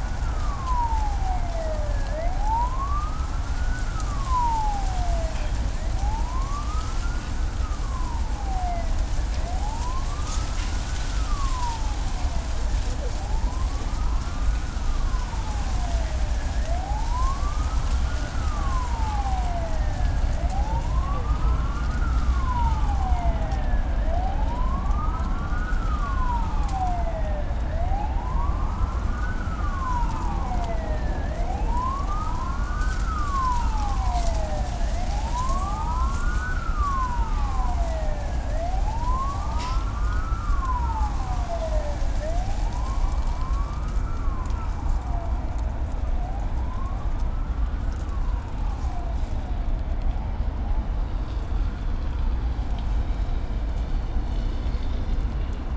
Prague, Rohanské nábřeží - rush hour city hum
city hum and sirens heard from within a bamboo bush near the river.
(SD702, DPA4060)